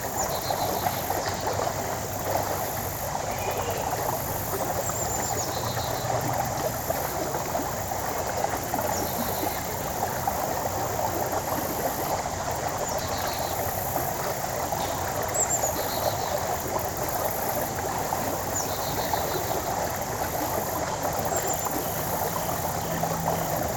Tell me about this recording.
Right near the water of Sewell Mill Creek. Water flows over a fallen log to the right of the recorder and insects can be heard from each side. If you listen closely, you can hear a faint mechanical sawing sound to the left of the mic throughout parts of the recording. Some people can also be heard off to the left. This recording was made with the unidirectional microphones of the Tascam DR-100mkiii. Some EQ was done in post to reduce rumble.